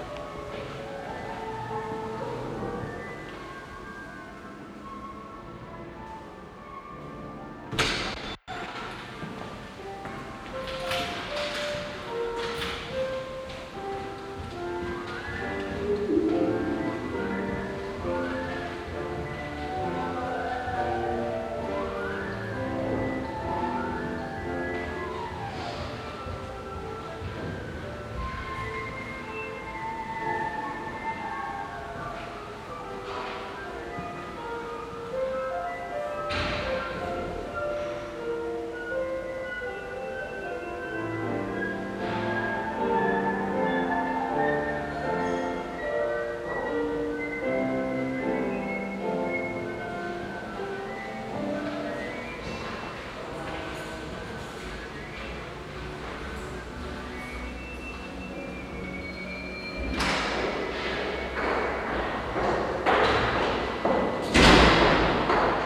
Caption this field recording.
Im historischen Gebäude der Folkwang Universtät der Künste Abteilung Musik.. Der Klang von Schritten, Stufen und Türen und die Klänge aus den Übungsräumen der Musikstudenten. Inside the historical building of the folkwang university of arts at the music department. The sounds of steps and the music performed by the students. Projekt - Stadtklang//: Hörorte - topographic field recordings and social ambiences